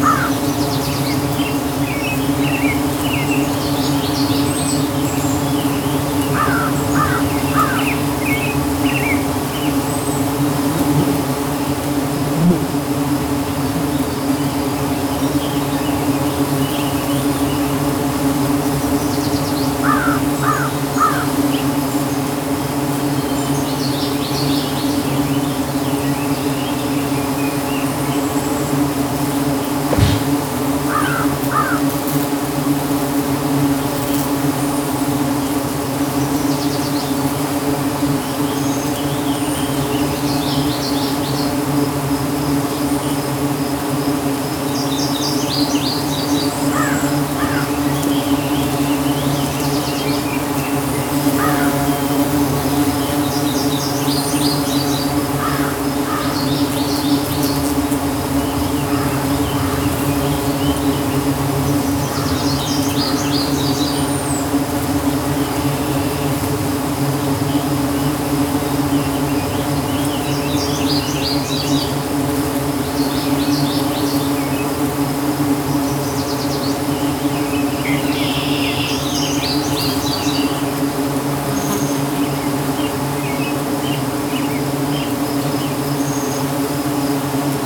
South Frontenac, ON, Canada - Black Locust tree with bees & birds
An enormous black locust tree covered in white blossoms, being enjoyed by thousands of bees. Zoom H2n underneath tree, facing upwards. Birds sing. An airplane passes. Screen doors are heard in the distance.
June 2016